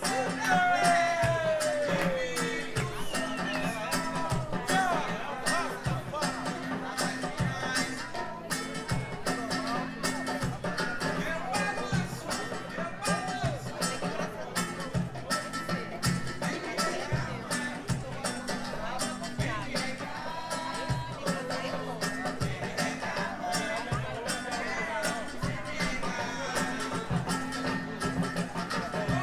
Cachoeira, Bahia, Brazil - Quinta do Preto Velho
Noite em Cachoeira na Rua 25, na quinta do Preto Velho.
Gravado com gravador Tascam DR 100
por Fred Sá
Atividade da disciplina de sonorização ministrado por Marina Mapurunga do curso de Cinema e Audiovisual da UFRB.